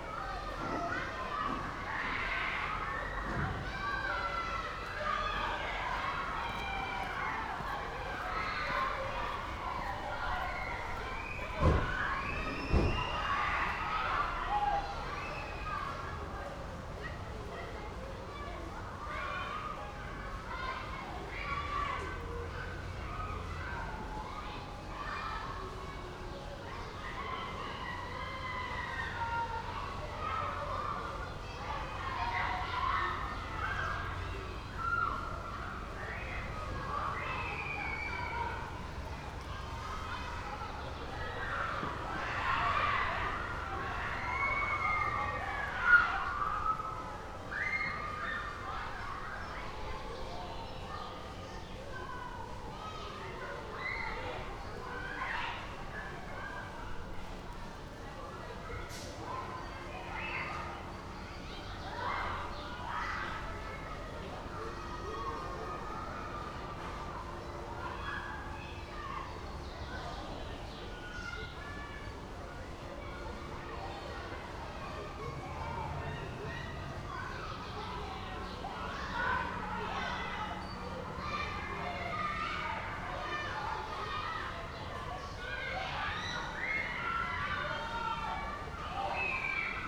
Sq. Emile Mayrisch, Esch-sur-Alzette, Luxemburg - schoolyard
sound from the school yard, Sq. Emile Mayrisch, Esch-sur-Alzette
(Sony PCM D50)